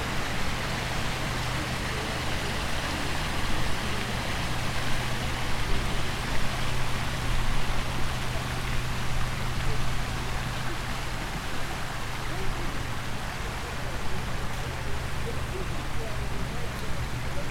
Autour de la fontaine Marocaine du Parc des Thermes.